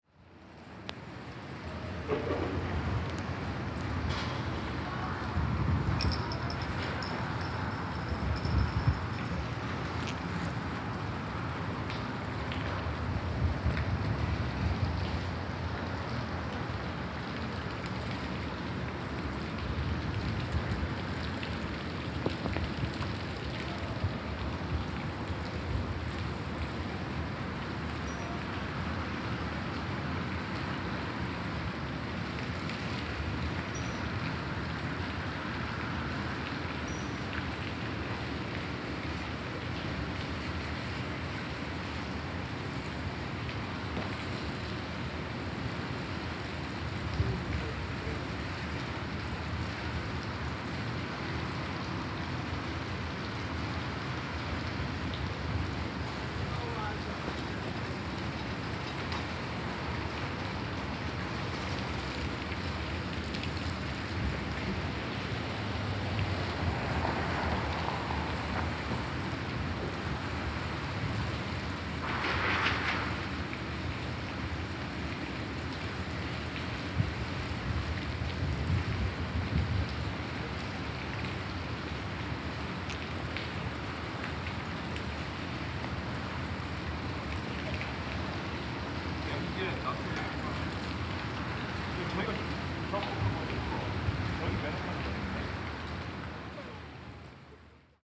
{"title": "St George Ferry Terminal, Staten Island", "date": "2012-01-08 11:35:00", "description": "dripping water, wind in metal structure", "latitude": "40.64", "longitude": "-74.07", "timezone": "America/New_York"}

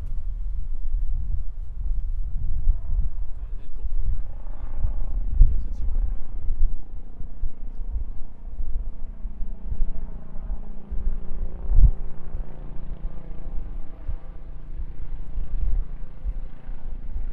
{"title": "Burgemeester Tellegenstraat, Amsterdam, Nederland - Helikopter/ Helicopter", "date": "2013-11-01 22:00:00", "description": "Op het eerste gezicht zou je het niet denken, maar deze buurt is ook berucht om de hoge misdaadcijfers. Het geluid van de politiehelikopter die soms urenlang boven de buurt hangt is een kenmerkend geluid", "latitude": "52.35", "longitude": "4.90", "altitude": "6", "timezone": "Europe/Amsterdam"}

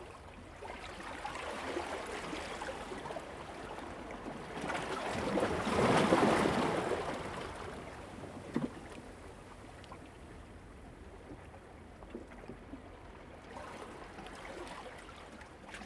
{"title": "南濱公園, Hualien City - Rocks and waves", "date": "2014-08-29 05:54:00", "description": "sound of the waves\nZoom H2n MS+XY", "latitude": "23.97", "longitude": "121.61", "altitude": "7", "timezone": "Asia/Taipei"}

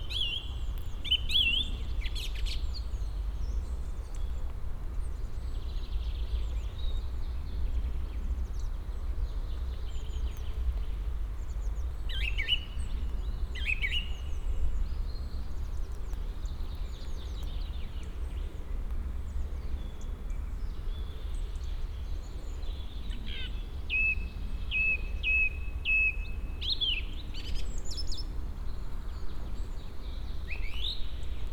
{"title": "Morasko nature reserve, path uphill - in the pine trees", "date": "2015-03-27 11:40:00", "description": "a very talkative bird sitting on one of the pine trees displaying its wide range of calls.", "latitude": "52.48", "longitude": "16.90", "altitude": "111", "timezone": "Europe/Warsaw"}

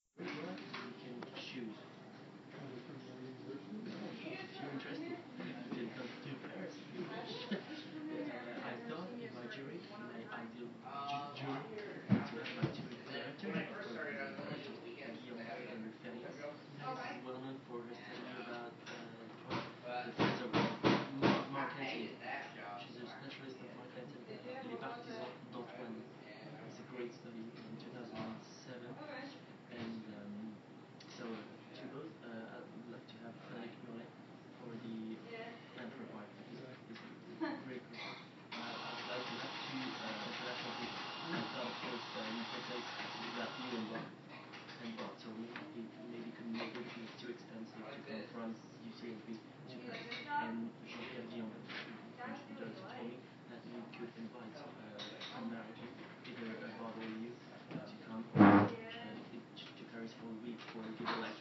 {"title": "Arlington, NY, USA - Crafted Kup", "date": "2015-03-02 16:01:00", "description": "Coffee shop on a Monday afternoon.", "latitude": "41.69", "longitude": "-73.90", "altitude": "54", "timezone": "America/New_York"}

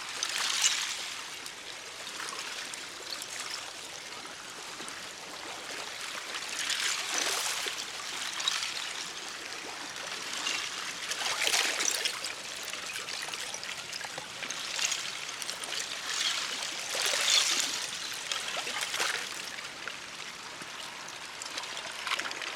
{"title": "Ontario Place, Lake Shore Blvd W, Toronto, ON, Canada - ice clinking", "date": "2021-02-03 01:34:00", "description": "Ice pieces clinking on gentle waves of Lake Ontario.", "latitude": "43.63", "longitude": "-79.42", "altitude": "73", "timezone": "America/Toronto"}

